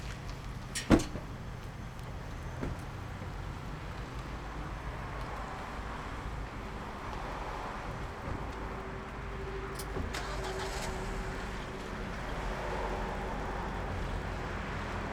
Berlin Wall of Sound, Ostpreussendamm - Lichterfelder Allee